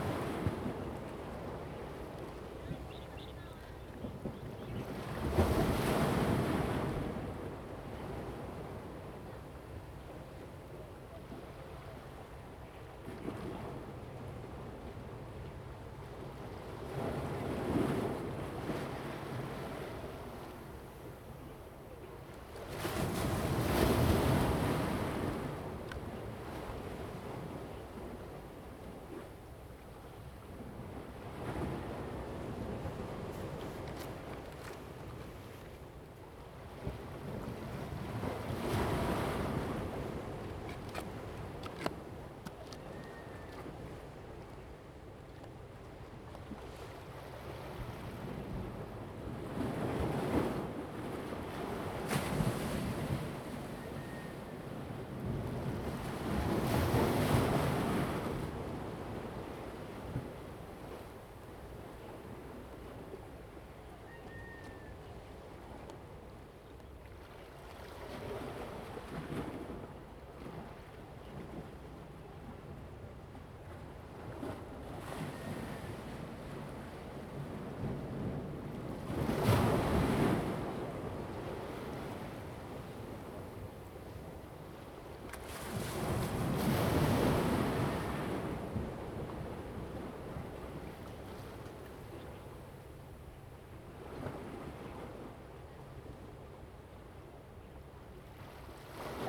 龍蝦洞, Hsiao Liouciou Island - Sound of the waves
Sound of the waves, Chicken sounds
Zoom H2n MS+XY
Liouciou Township, Pingtung County, Taiwan